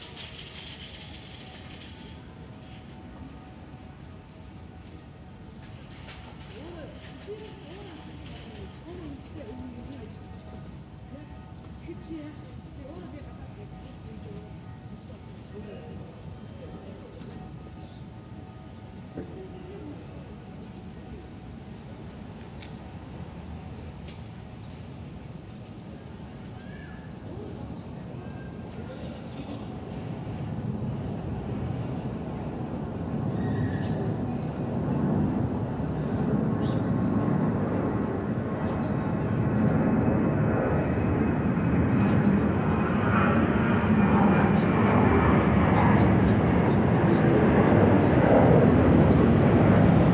Fordoner Straße, Berlin, Deutschland - Fordoner Straße, Berlin - small square, passers-by, airplanes
Fordoner Straße, Berlin - small square, passers-by, airplanes. Residing next to an allotment site and next to the green belt which girds the small stream Panke, this place lies in a forgotten corner of Soldiner Kiez. If there weren't airplanes overflying every three minutes and if it were not so strewn with litter, it could even be called peaceful.
[I used the Hi-MD-recorder Sony MZ-NH900 with external microphone Beyerdynamic MCE 82]
Fordoner Straße, Berlin - kleiner Platz, Passanten, Flugzeuge. Zwischen einer Kleingartenanlage und dem Grüngürtel entlang der Panke gelegen bildet dieser Platz einen vergessenen Winkel im Soldiner Kiez. Wären da nicht die Flugzeuge, die alle drei Minuten darüber hinwegziehen, und wäre der Platz nicht ganz so vermüllt, könnte man die Atmosphäre fast als friedlich beschreiben.
[Aufgenommen mit Hi-MD-recorder Sony MZ-NH900 und externem Mikrophon Beyerdynamic MCE 82]
Berlin, Germany, 12 October 2012